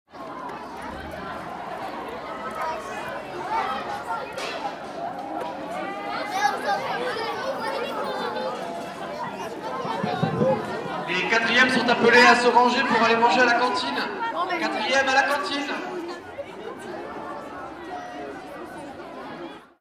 Appel pour la cantine, collège de Saint-Estève, Pyrénées-Orientales, France - Appel pour la cantine
Preneur de son : Etienne